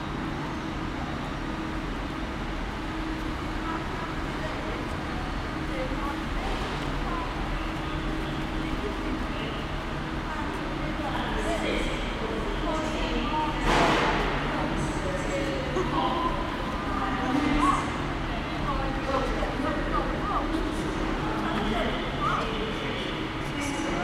Carlisle Train Station, Court Square, Carlisle, UK - Carlisle Train Station
Recording from within Carlisle train station. Loudspeaker announcements, train engines and people talking. Recorded with members of Prism Arts.
England, United Kingdom, 27 June 2022, 13:38